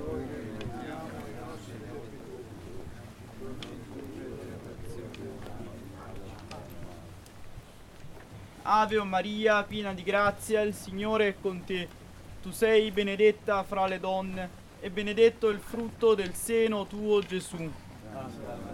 Sant'Agnello, Italie - Black procession of the Easter
At 3 o'clock in the night, more than 200 men walk in the village with the 'Black Madonna'. They move slowly, all the bodies and faces hidden in a black suit, singing and praying.
Sant'Agnello Naples, Italy, 18 April